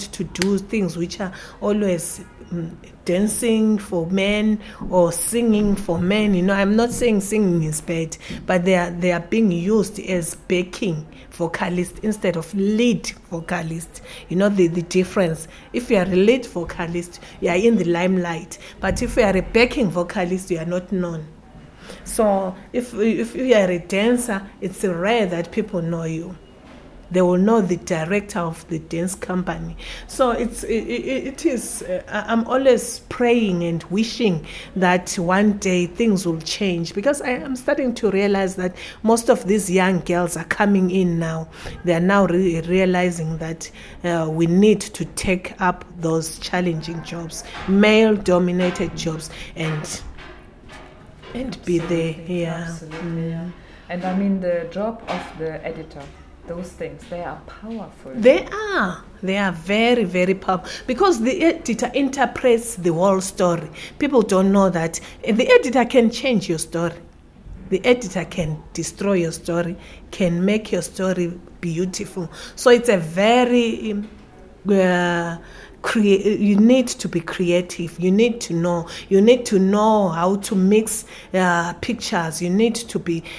Makokoba, NGZ, Studio of the painter Nonhlanhla Mathe, Bulawayo, Zimbabwe - Priscilla Sithole - men, women, film and technical jobs….
Priscilla Sithole, pioneering women filmmaker in Bulawayo, here tells her story how she first encountered a movie-camera on one of the tours with Amakhosi Productions to Switzerland, and how life took off from there… today, Priscilla is most dedicated to the task of passing on her skills to young women through her Ibhayisikopo Film Project:
We are in the Studio of the painter Nonhlanhla Mathe, and you can here much of the activities in the other studios and the courtyard of the National Gallery… a conversation with our host, Nonhlanhla will follow…